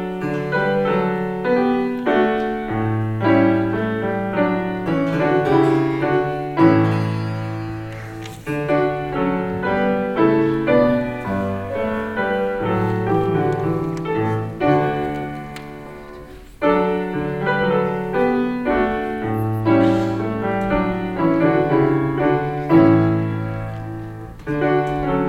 alljährliches klavier vorspiel der Klavierschüler in der schulaula.hier: die weihnachtslieder auswahl
soundmap nrw - weihnachts special - der ganz normale wahnsinn
social ambiences/ listen to the people - in & outdoor nearfield recordings
waldorfschule, aula